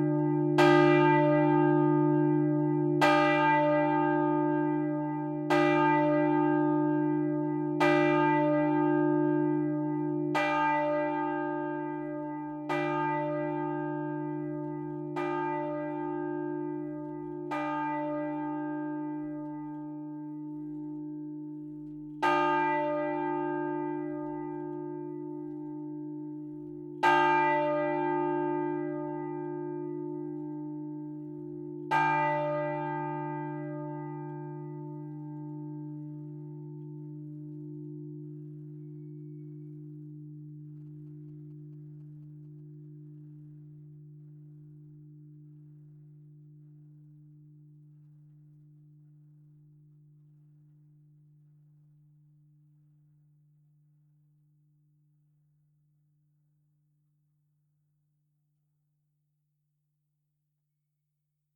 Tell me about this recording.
Tourouvre au Perche (Orne), Église St-Aubin, Volée cloche 1